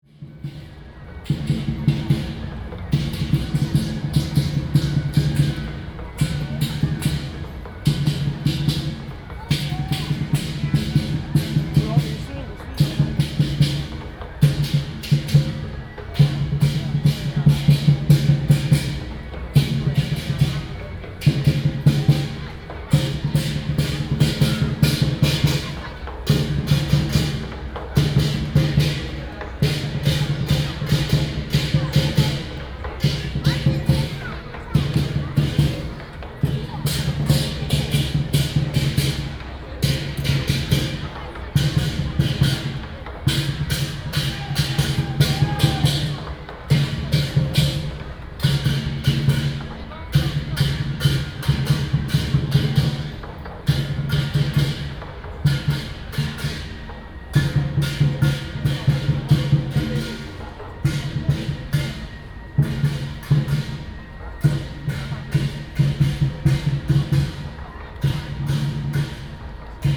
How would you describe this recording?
Festivals, Walking on the road, Traditional and modern variety shows, Keelung Mid.Summer Ghost Festival